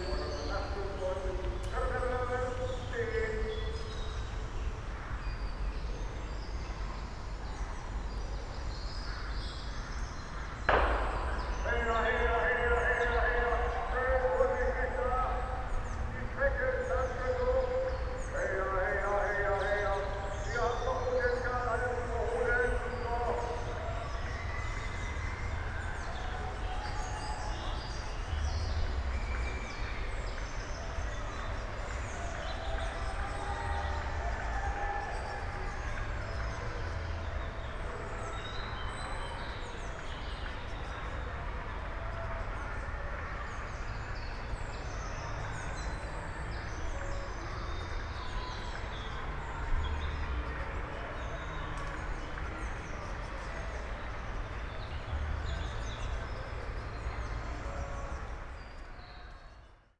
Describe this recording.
Oldenburg, Eversten Holz park, sound of starting kids marathon in the distance, (Sony PCM D50, Primo EM172)